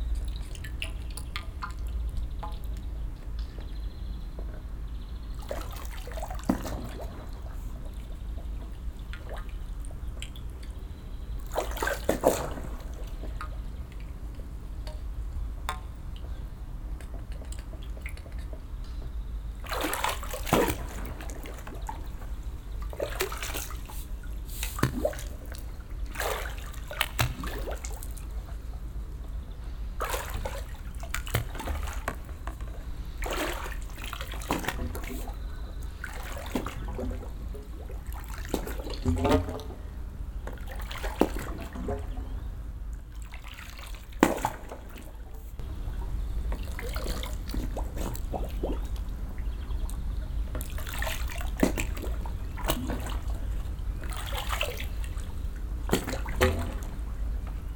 H2Orchester des Mobilen Musik Museums - Instrument Plumps und Plopp Stäbe - temporärer Standort - VW Autostadt
weitere Informationen unter